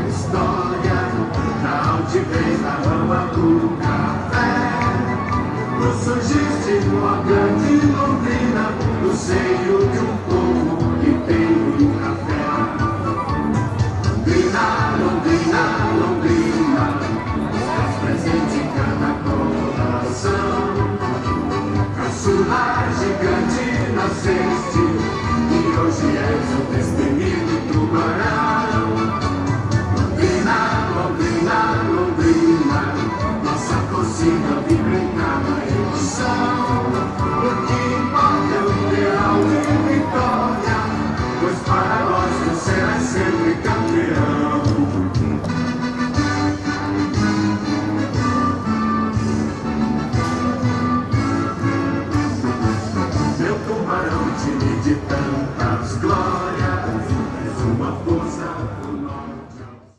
Calçadão de Londrina: Ação comercial do Londrina Esporte Clube - Ação comercial do Londrina Esporte Clube / Londrina Esporte Clube commercial action
Panorama sonoro: ação comercial do time de futebol Londrina Esporte Clube no Calçadão com uso de um megafone. De uma loja localizada em frente à ação, vendedores reproduziam músicas e o hino do clube a partir do equipamento de som instalado no estabelecimento.
Sound Panorama: commercial action of the soccer team Londrina Esporte Clube in the Boardwalk with the use of a megaphone. From a store located in front of the action, vendors played music and the clubs anthem from the sound equipment installed in the establishment.
- Centro, Londrina - PR, Brazil